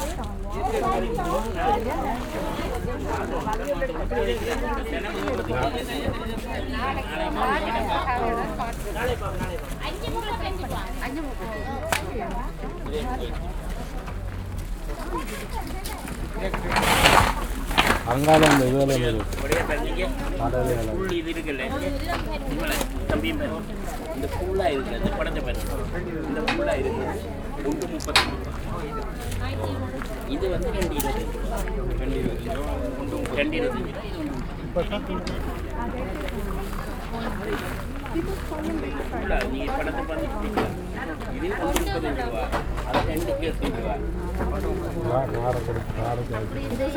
Strolling among the stalls of the bazar. Still a lot of setting up going on in and around the stalls. Sounds of the bazar are mixing with the sounds of ongoing prayers and offerings from inside the temple. Day before the main temple fest.
Nordrhein-Westfalen, Deutschland, 25 June 2022